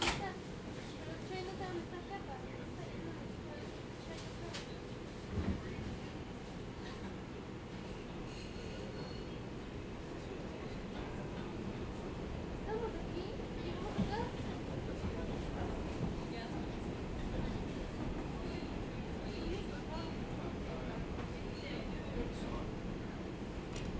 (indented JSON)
{
  "title": "Midtown East, New York City, New York, USA - NYC, metro train trip",
  "date": "2014-02-15 14:40:00",
  "description": "NYC, metro train trip from grand central station to wall street; passengers, announcements, doors;",
  "latitude": "40.75",
  "longitude": "-73.98",
  "timezone": "America/New_York"
}